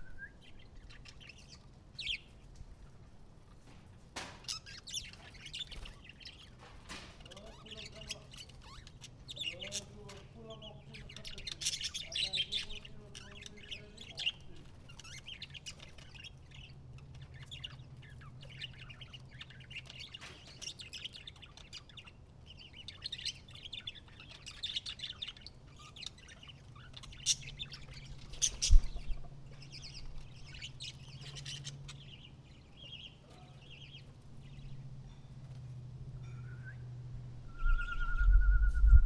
Unnamed Road, Haifa, ישראל - Haifa
field recording sunny Haifa